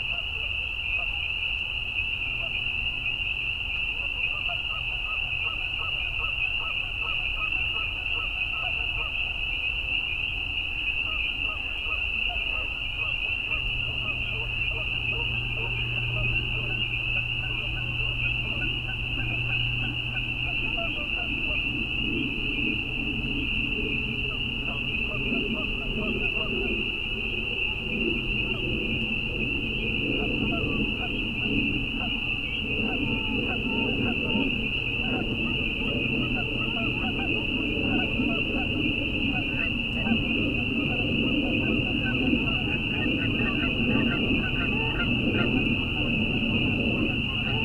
Tiny Marsh, Tiny, Ontario - Tiny Marsh in the Evening
Tiny Marsh, Tiny, Ontario - May 14, 2019
Best heard through headphones.
Marsh sounds in the evening (9:30pm) Rural marsh with Canada Geese, Peepers. Jet flies overhead. Mics placed 0.5 Km into the marsh on a dike in open area. Natural reverb from trees surrounding open water. Road noise 2Km away. Recorded with ZoomF4 with UsiPro Omni mics. No post processing used of any kind. I am a beginner and looking for CC.